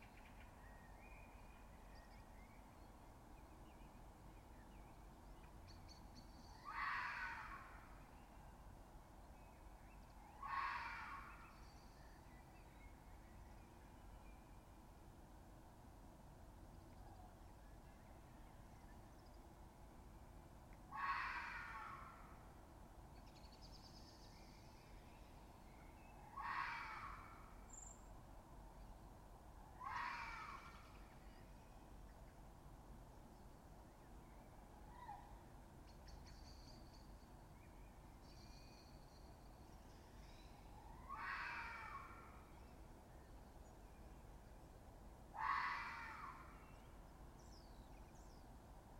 Harp Meadow Ln, Colchester, UK - Fox Screaming, 1am.
A fox recorded with USI Pro around 1am recorded onto a mixpre6.